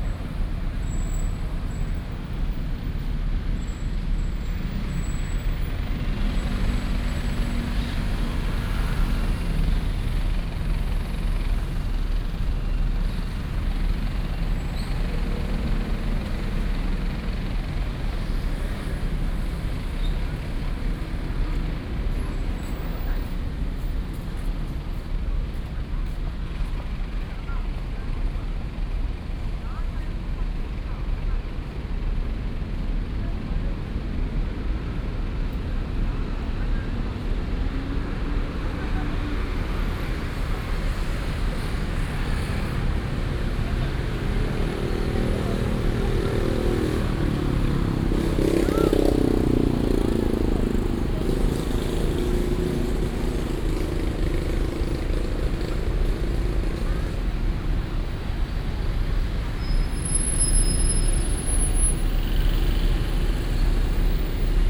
平安公園, 大安區, Taipei City - Footsteps and Traffic Sound
End of working hours, Footsteps and Traffic Sound
28 July 2015, 18:30